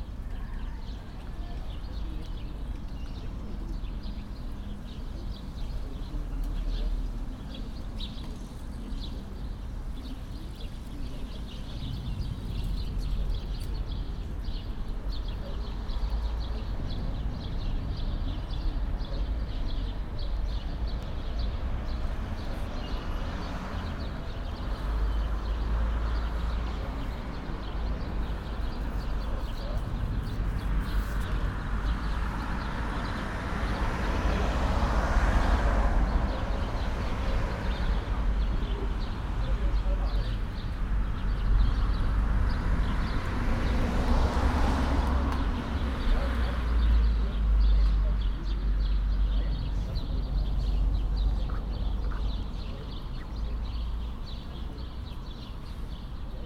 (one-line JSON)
{"title": "Schopenhauerstraße, Weimar, Germany - Man and Nature", "date": "2020-07-23 16:25:00", "description": "*Listen with headphones for best acoustic results.\nAn acoustic demonstration of urban design and planning involving natural installations. This place has been designed with tress on the periphery with dotted trees and quadratic floral-scapes in the forecourt which makes it noticeably vibrant with bird life.\nMajor city arrivals and transits take place here. Stereo field is vivid and easily distinguishable.\nRecording and monitoring gear: Zoom F4 Field Recorder, LOM MikroUsi Pro, Beyerdynamic DT 770 PRO/ DT 1990 PRO.", "latitude": "50.99", "longitude": "11.33", "altitude": "237", "timezone": "Europe/Berlin"}